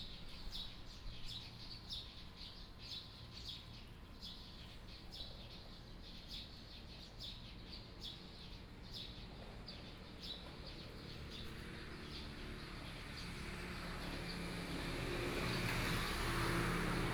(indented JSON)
{
  "title": "羅東鎮北成里, Yilan County - Birds singing",
  "date": "2014-07-27 12:43:00",
  "description": "Birds singing, Traffic Sound, Road corner, Standing under a tree, Hot weather\nSony PCM D50+ Soundman OKM II",
  "latitude": "24.68",
  "longitude": "121.76",
  "altitude": "16",
  "timezone": "Asia/Taipei"
}